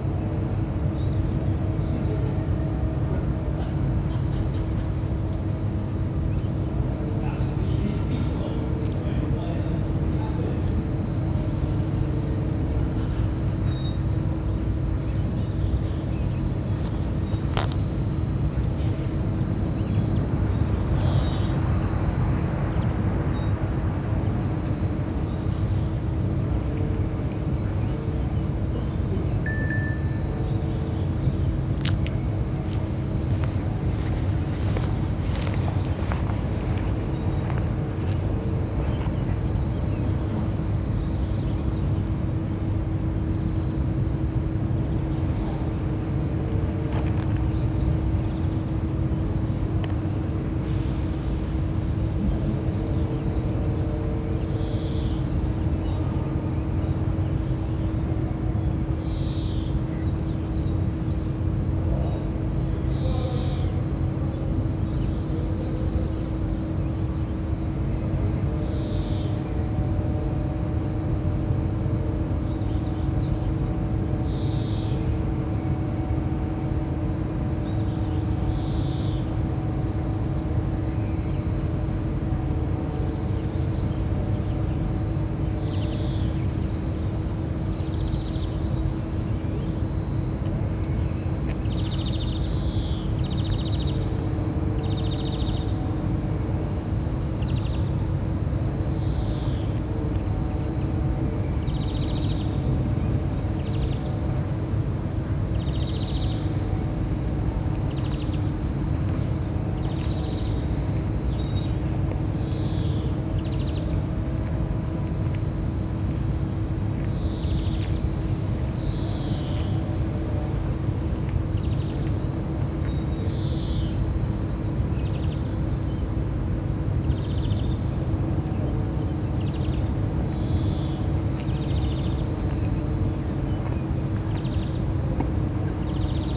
Zeppelinstraße, Bitterfeld-Wolfen, Deutschland - Heraeus factory
In the midst of a huge factory for glass fiber, Heraeus, distant machine hums and humans mingle. Binaural mix from an ambisonic recording with a Sennheiser Ambeo
19 May 2022, 08:48